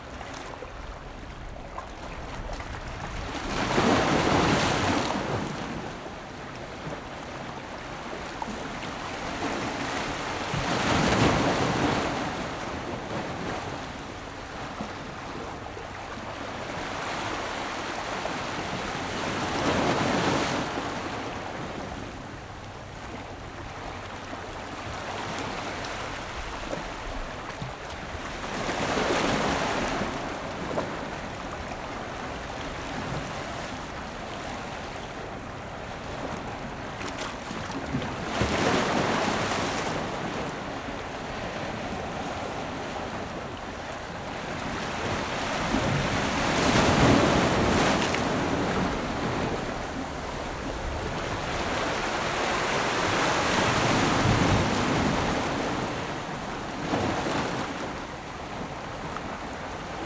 Waves and tides, On the rocky coast
Zoom H6 + Rode NT4
23 October 2014, 14:41